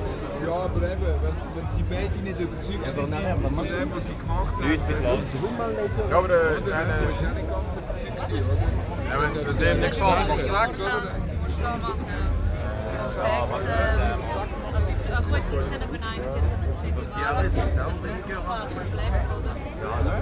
Aarau, Walk, Evening before Maienzug, Schweiz - Vorabend1
A short walk through Milchgasse, Rathausgasse to Kirchplatz, where canons are going to be prepared. Note the quite different sound compared to earlier walks.